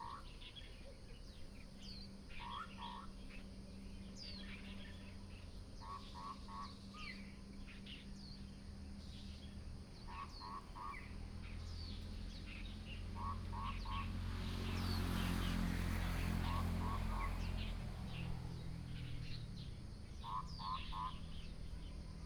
卓蘭鎮第三公墓, Miaoli County - A variety of bird sounds
Birds sound, Next to the grave, A variety of bird sounds, Binaural recordings, Sony PCM D100+ Soundman OKM II